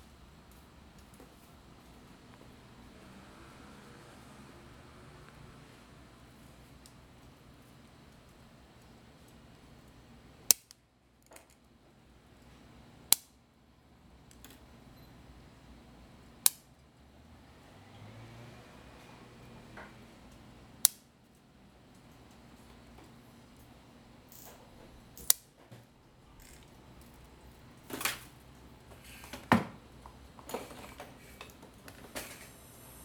Chi-Feng silver god headdress 啟豐銀帽 - Welding and cutting the iron sheet
The traditional artisan making the silver god headdress. 工藝家製作銀帽過程